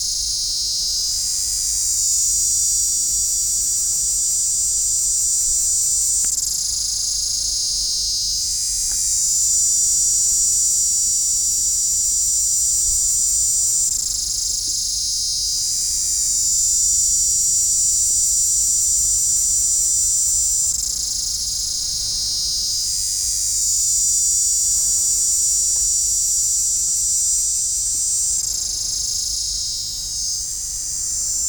Koh Samui, Mae Nam beach, Grasshopers.
Plage de Mae Nam à Koh Samui, les criquets.
Surat Thani, Thailand, 28 April 2009, ~13:00